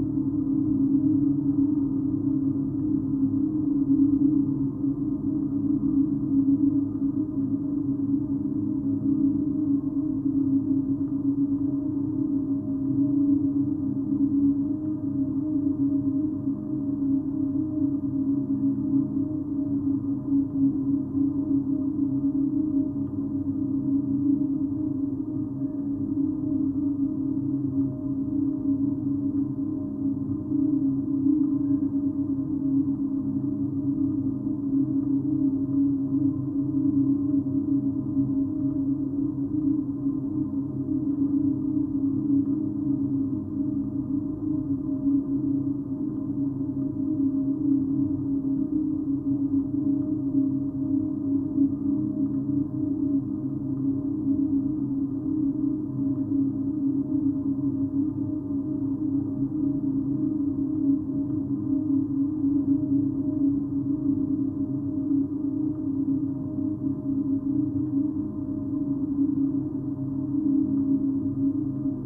Jūrmala, Latvia, chimneys drone
geophone on the root of metallic chimney
Vidzeme, Latvija, 22 July 2020, ~18:00